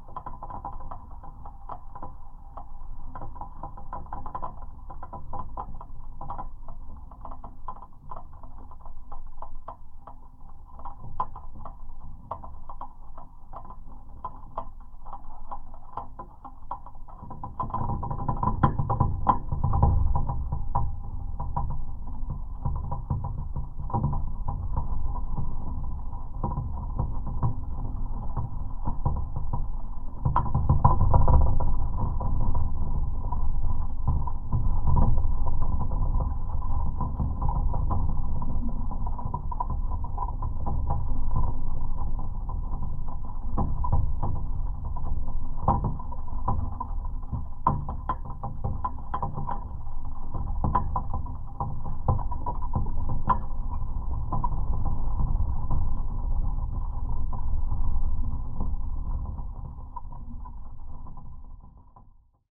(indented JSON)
{
  "title": "Utena, Lithuania, the base of flag pole",
  "date": "2021-03-27 14:40:00",
  "description": "listening to flag pole through my geophone.",
  "latitude": "55.50",
  "longitude": "25.62",
  "altitude": "114",
  "timezone": "Europe/Vilnius"
}